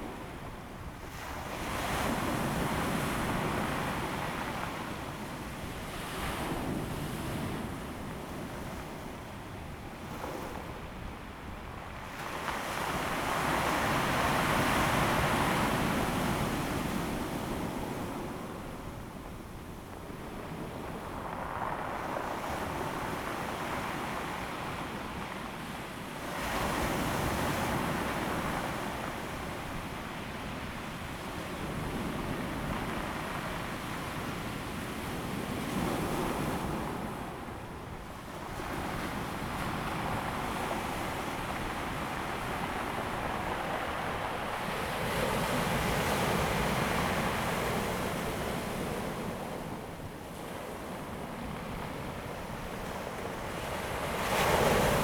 南興村, Dawu Township - Sound of the waves
Sound of the waves, The weather is very hot
Zoom H2n MS +XY